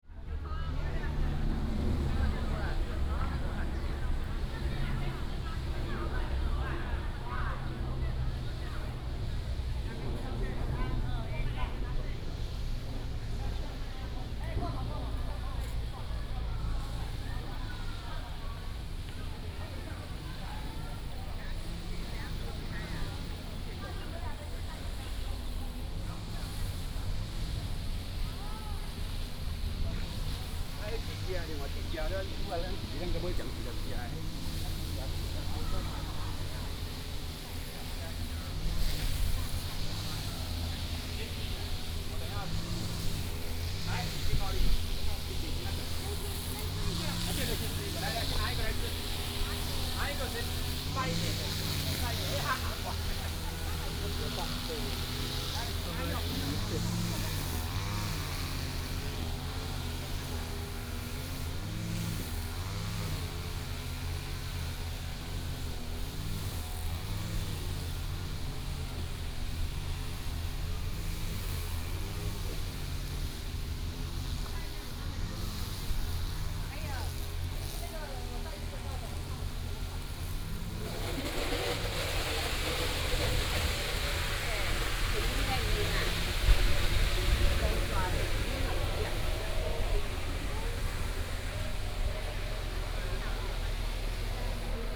角板山公園, 桃園市復興區 - walking in the park
in the park, Lawn mower, Traffic sound, Tourists, Dog, The plane flew through